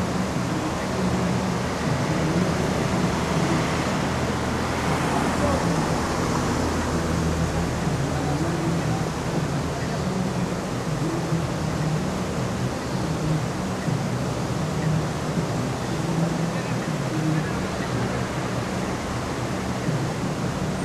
graz iv. - an der mur
an der mur